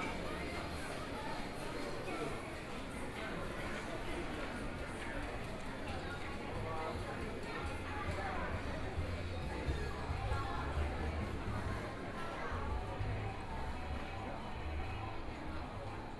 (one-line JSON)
{"title": "中正區黎明里, Taipei City - Shopping Street", "date": "2014-02-28 14:57:00", "description": "walking in the Underground shopping street, Through a variety of different shops\nPlease turn up the volume a little\nBinaural recordings, Sony PCM D100 + Soundman OKM II", "latitude": "25.05", "longitude": "121.51", "timezone": "Asia/Taipei"}